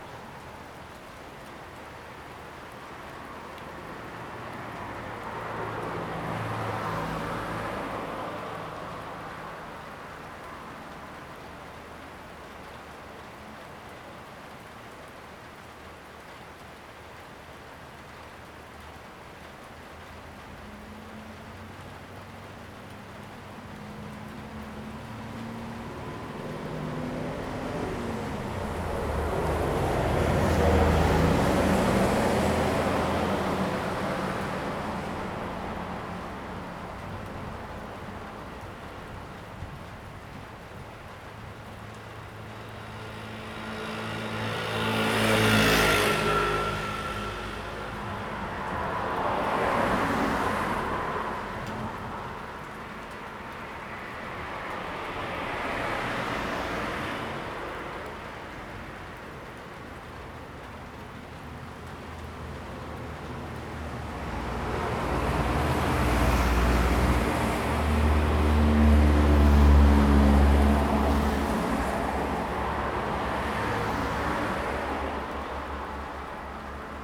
Chengguang Rd., Chenggong Township - Traffic, rain, Thunder
Traffic Sound, The sound of rain, Thunder
Zoom H2n MS+XY
Taitung County, Taiwan, 8 September 2014